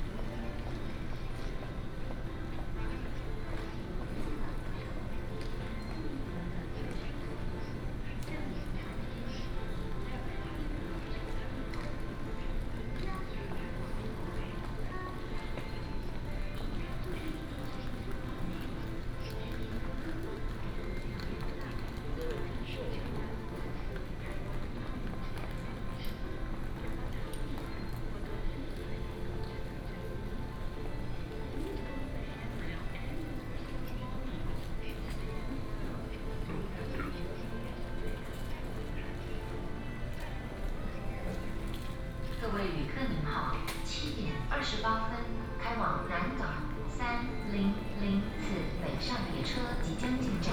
桃園高鐵站, Taiwan - In the station hall
In the station hall, Station Message Broadcast, trunk
Binaural recordings, Sony PCM D100+ Soundman OKM II